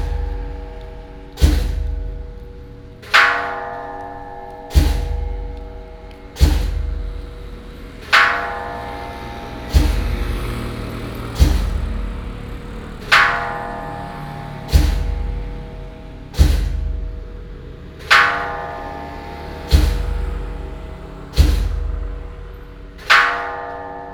聖福宮, Zhongli Dist. - Bells and drums
In the square of the temple, Bells and drums, traffic sound